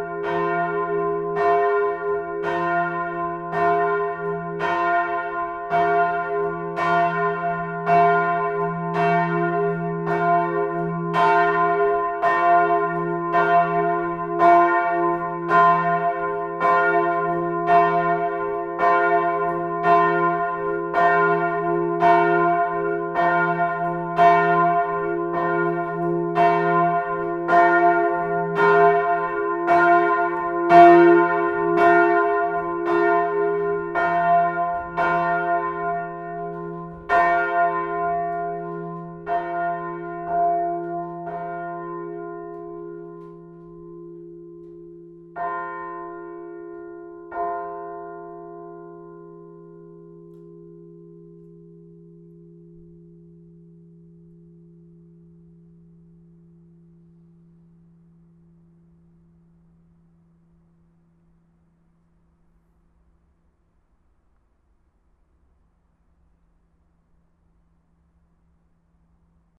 essen, old catholic church, bells
and the next one.
those bells are not iron, which is rare to find in Germany.
Projekt - Klangpromenade Essen - topographic field recordings and social ambiences